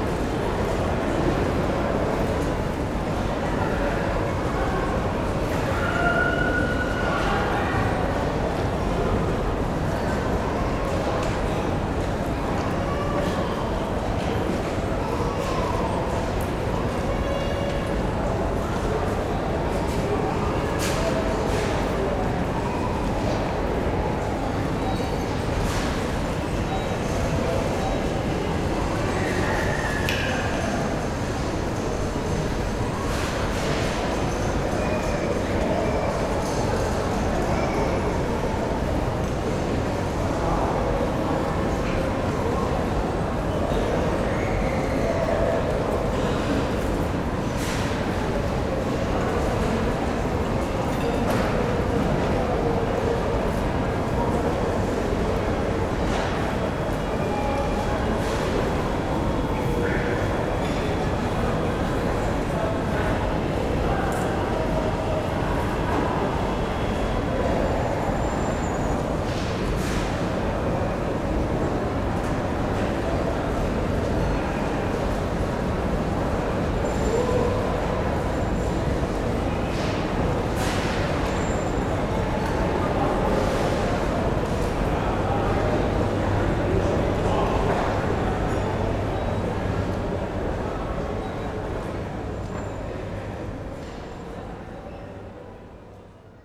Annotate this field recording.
the city, the country & me: february 18, 2012